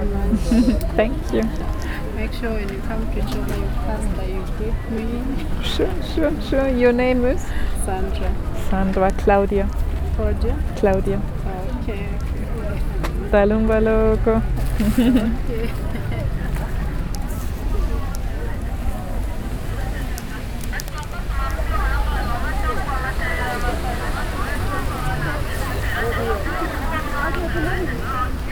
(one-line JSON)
{"title": "Street Market, Choma, Zambia - Chitenge traders - they dont want us here...", "date": "2018-08-13 11:07:00", "description": "When I returned to Choma in June 2018, after two years, I was surprised seeing merely big building work and no market stalls and traders along the road. Since I had already spent a good ten days in Lusaka, I had seen similar development there, had spoken with traders, and learnt that since outbreak of the Cholera earlier that year, street vending had been forbidden, and market traders mostly not allowed to return to their business (apart only from a very small group of those certified as handicapped). However, a majority of people in the Zambian society rely on this part of the economy for their and their family’s daily survival. A couple of street markets in Zambia had recently gone up in flames; and I came across various rumours of arson. The later may come with little surprise reading below a quote from a local government announcement in Southern Province In Jan.", "latitude": "-16.81", "longitude": "26.99", "altitude": "1316", "timezone": "Africa/Lusaka"}